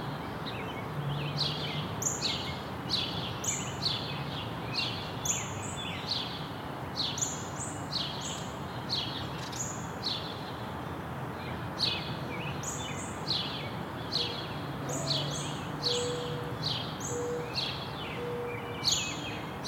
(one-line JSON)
{"title": "New York, NY, USA - The Seuffert Bandshell", "date": "2022-05-04 11:45:00", "description": "The sound of birds recorded in front of the Seuffert Bandshell - a curved surface designed to reflect sound outwards in one direction.", "latitude": "40.70", "longitude": "-73.86", "altitude": "50", "timezone": "America/New_York"}